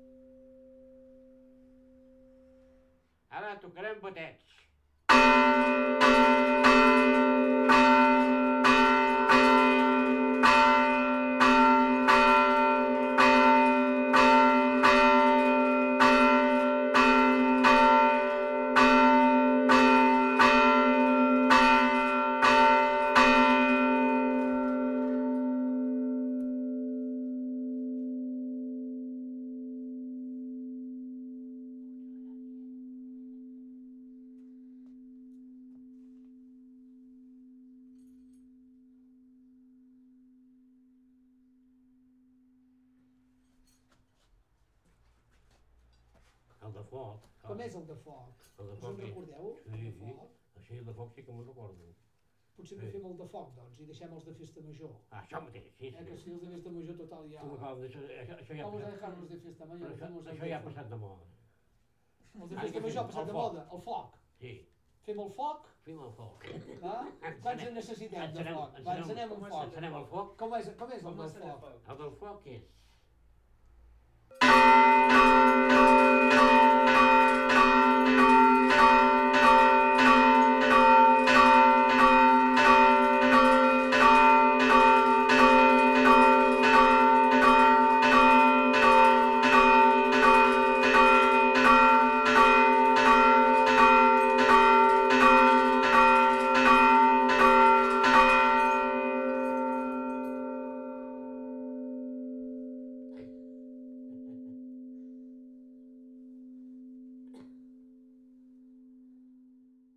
SBG, Iglesia - El Campaner de St. Bartomeu
Josep 'Pepet' Baulenas, campaner de Sant Bartomeu durante casi cincuenta años, regresa al campanario para tratar de reproducir algunos de los repiques tradicionales.
1 August, 10:00